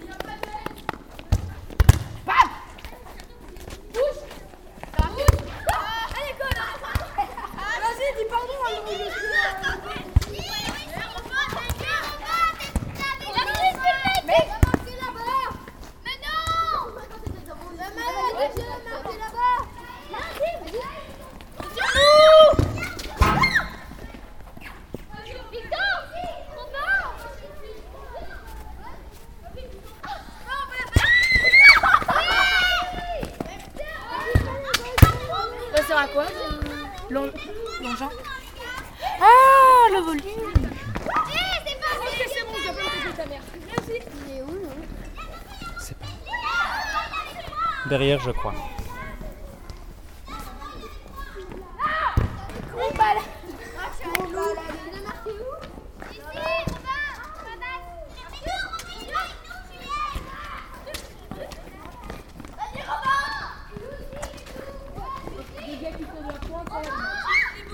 Children playing football in their school : l'école du Neufbois.